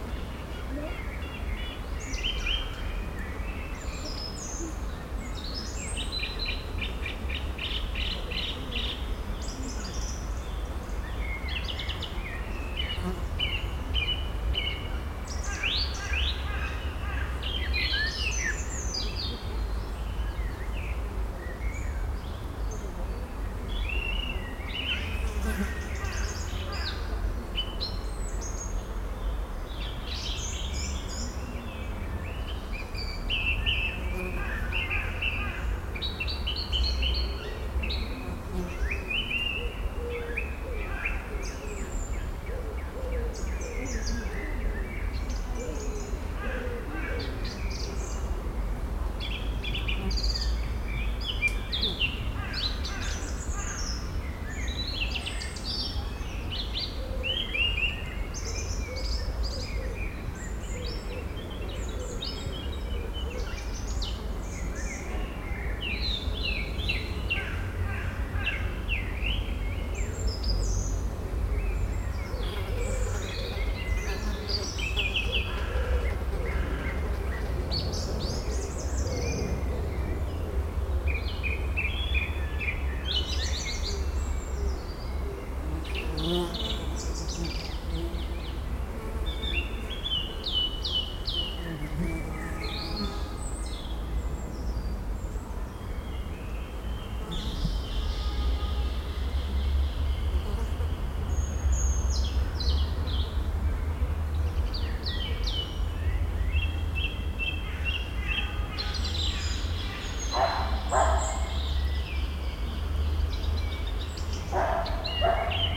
Brussels, Parc Duden, a dead bird near the water.
En promenade au Parc Duden à Bruxelles, jai vu un trou deau et une charogne pourrissante, un oiseau vraisemblablement.
Forest, Belgium, June 2008